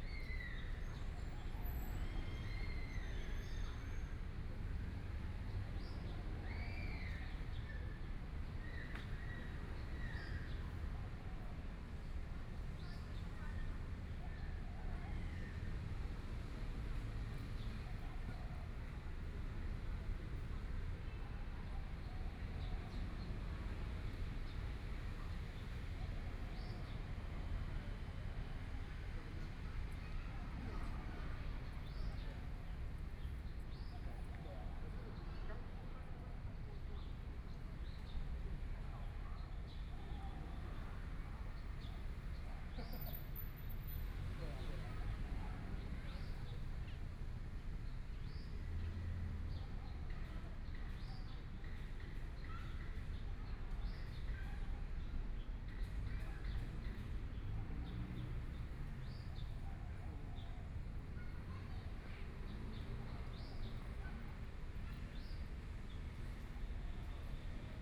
YiJiang Park, Taipei City - Sitting in the park
Sitting in the park, Traffic Sound
Please turn up the volume
Binaural recordings, Zoom H4n+ Soundman OKM II
Zhongshan District, Taipei City, Taiwan, 17 February, ~16:00